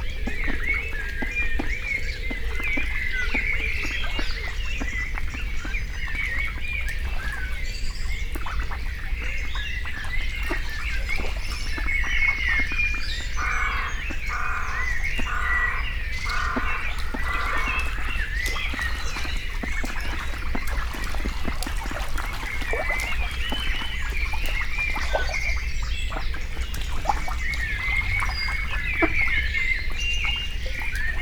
Recordings in the Garage, Malvern, Worcestershire, UK - Duck Pond
Hungry mallard greet the day, in fact 14 young ducks ready to fly with the female who nested on the roof of our summer house next to the pond.
Mix Pre 6 II with 2 x Beyer MCE 5 Lavaliers.